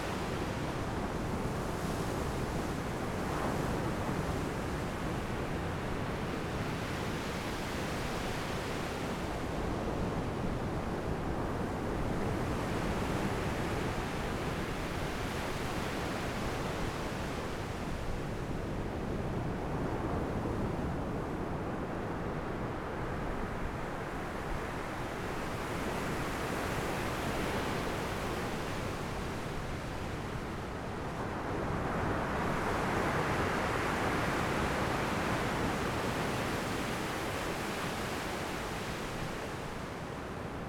Sitting on the beach, The sound of the waves at night, Zoom H6 M/S
Taitung City, Taitung County, Taiwan, 16 January 2014